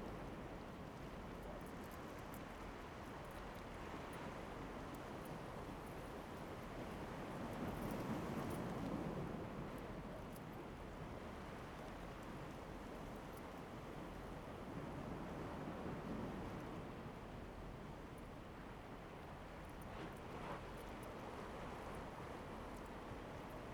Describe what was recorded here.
sound of the waves, Zoom H2n MS+XY